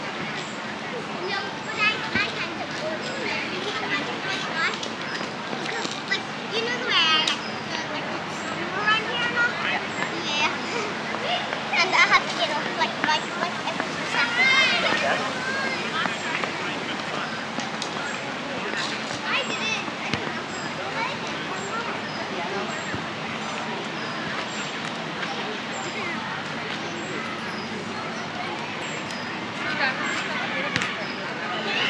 Unnamed Road, Belfast, UK - Botanic Gardens-Exit Strategies Summer 2021
Recording of people engaged in a conversation, children running and shouting around, strollers being pushed, sports activities being played in the green space, bicyclists and their bells, and a calm natural setting with the bird chirps.